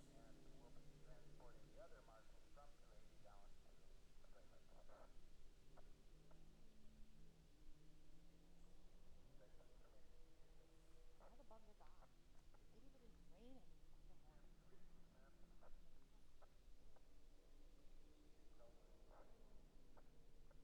The sounds of rally cars passing our marshal location for the Ojibwe Forest Rally